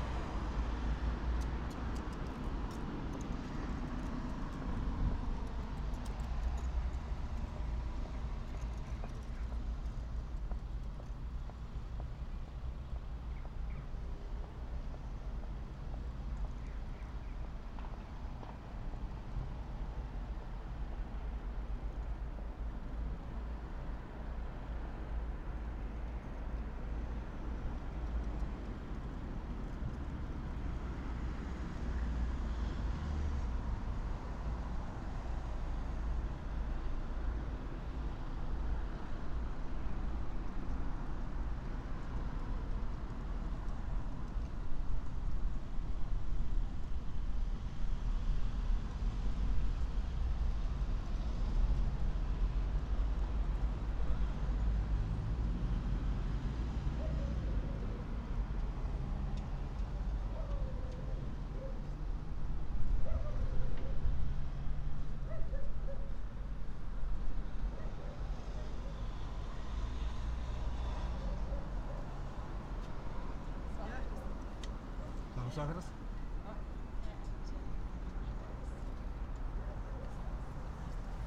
Rokiškis, Lithuania, evening cityscape
not so far from municipality building...policemen came asking me what I am going to do....
Rokiškio rajono savivaldybė, Panevėžio apskritis, Lietuva